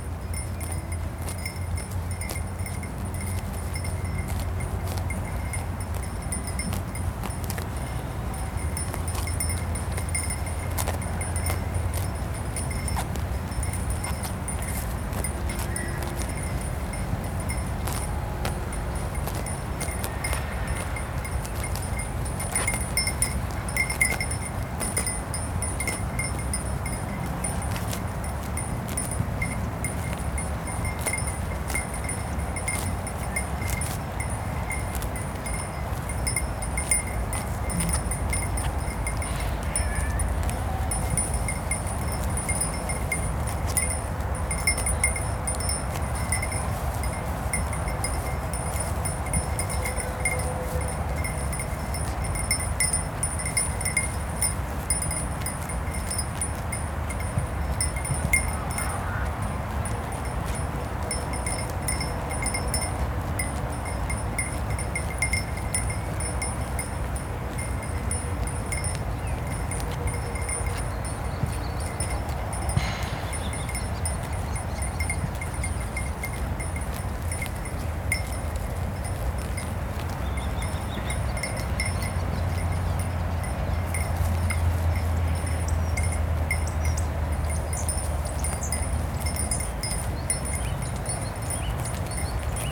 grazing sheep, bird, highway in the background
Capation : ZOOMH4n
15 April 2022, 19:00